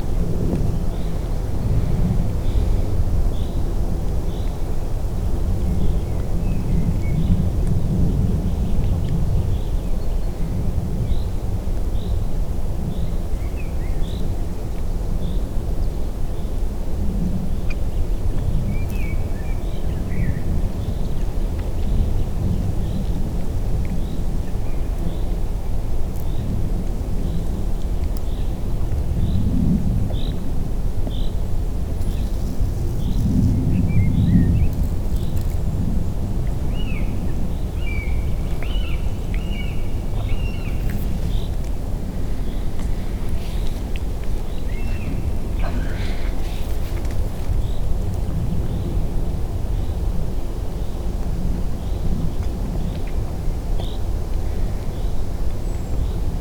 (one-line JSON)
{"title": "Unnamed Road, Black lake - Blak lake", "date": "2019-06-10 13:11:00", "description": "very quiet ambience at the Black lake. (roland r-07)", "latitude": "54.72", "longitude": "17.66", "timezone": "GMT+1"}